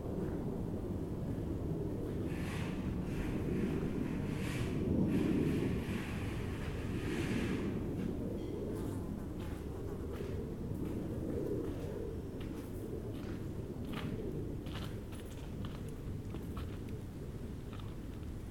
Rue de Fürstenberg, Paris, France - In the Garden at the Delacroix Museum
Recorded while sitting and sketching in the garden of the Musée National Eugène-Delacroix in Paris. This was where French painter Eugène Delacroix lived from 1858 to 1863.
France métropolitaine, France, 19 July 2019